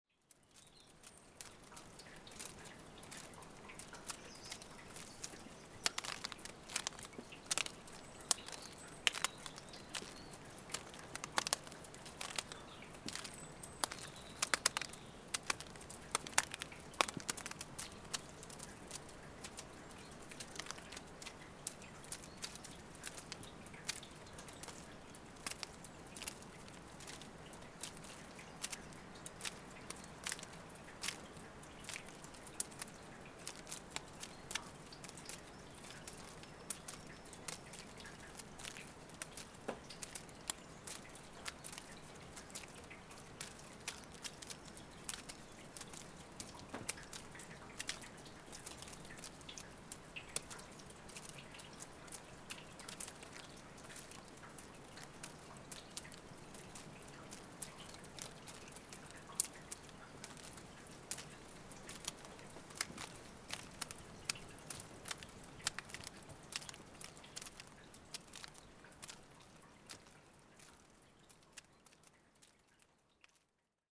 Gabre, France - Melting snow
Melting snow on leaves with wind, Zoom H6